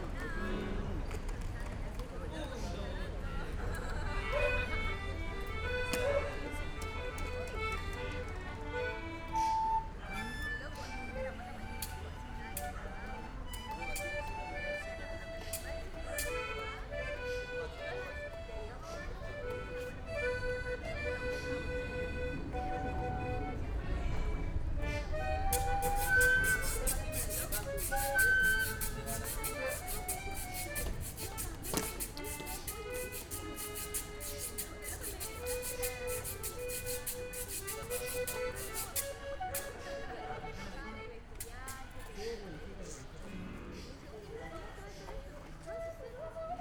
Plaza el Descanso, Valparaíso, Chile - evening ambience
evening ambience at Plaza el Descanso, Valparaíso. At night time, tourists, locals, buskers and jugglers come here to chill and play. The place has its name (descanso means rest, break, recreation) from funeral processions, which used to stop here and have a rest on their way up to the cemetery on the hill
(SD702, DPA4060)
25 November 2015, 21:05